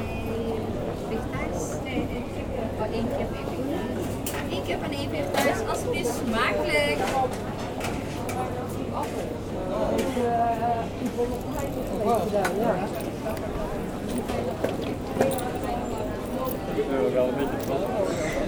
A walk in Maastricht. People walking quietly in the very commercial street of Maastricht. Bells ringing on Markt.
Maastricht, Netherlands, 20 October 2018, 15:00